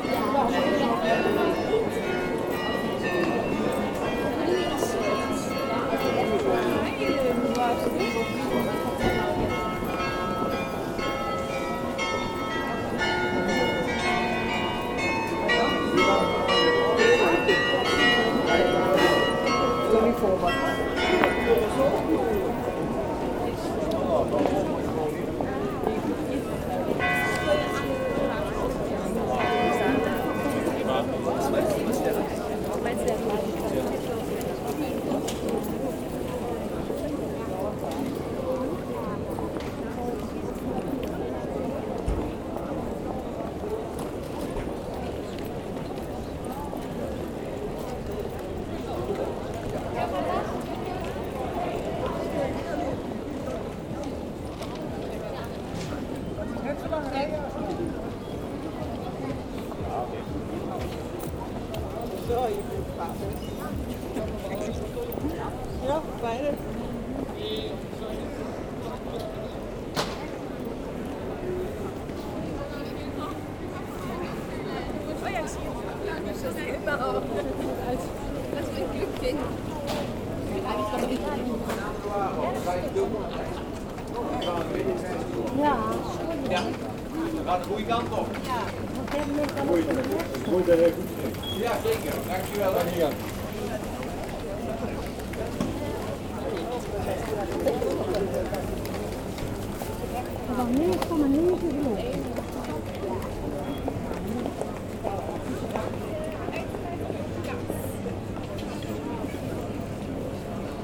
Maastricht, Pays-Bas - Commercial street
A walk in Maastricht. People walking quietly in the very commercial street of Maastricht. Bells ringing on Markt.
Maastricht, Netherlands, 20 October